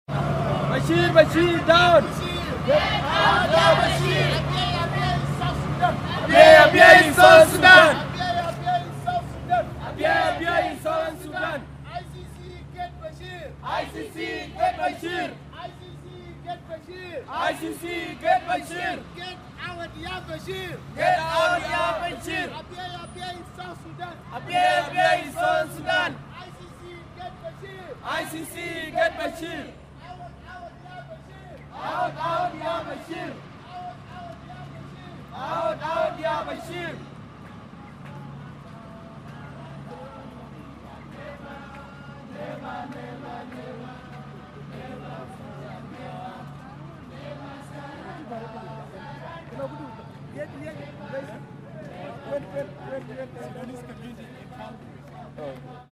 Sudanese Protest, Calgary City Hall
Sudanese-Canadian protest of Omar-Al Bashir
5 June 2011, ~05:00